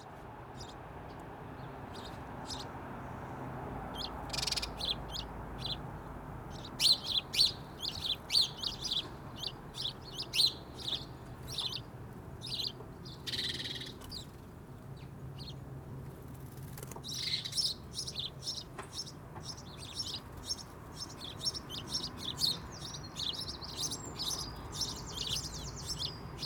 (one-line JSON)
{"title": "Reading, UK - Twelve Sparrow Nestboxes", "date": "2017-05-02 10:32:00", "description": "Your common or garden House Sparrows are now having a bit of a hard time in the UK as so many people have decked their gardens, or generally 'tidied-up' too much, thus depriving these loveable little birds of not only their natural food sources but a lovely bit of bare ground to have a dust bath in, to get rid of all those pesky parasites. I have put up 12 nest boxes on the back of my house, either side of a second storey sash window and at the moment 11 are occupied. This recording is made using two lavalier mics (Sony 77bs) into a Sony M10, placed either side of the exterior windowsill, this is not ideal as there is a 'gap' in the stereo image as you will hear, ...... I also have very understanding neighbours!", "latitude": "51.45", "longitude": "-0.97", "altitude": "40", "timezone": "Europe/London"}